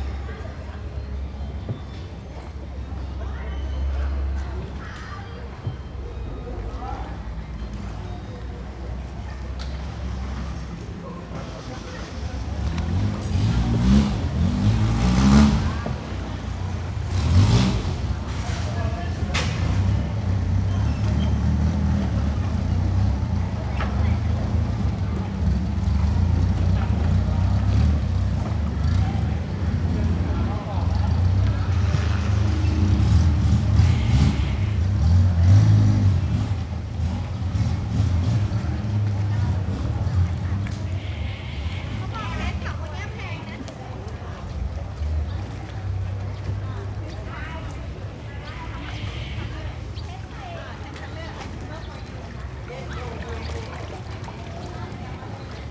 Damnoen Saduak, Amphoe Damnoen Saduak, Ratchaburi, Thailand - drone log 12/03/2013
damnoen saduak floating market
(zoom h2, build in mic)
จังหวัดนครปฐม, ราชอาณาจักรไทย